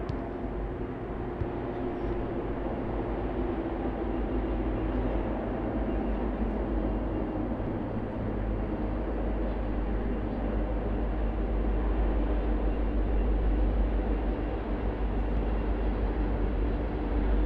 Recorded in the late evening on the west facing slope of preserve hill between Blanca and JLK apartment buildings. I [placed the Zoom H1 on the ground on a tripod about 4 inches from the grass. The mic was angeled slightly south-west towards the sports fields below. No dead cat used.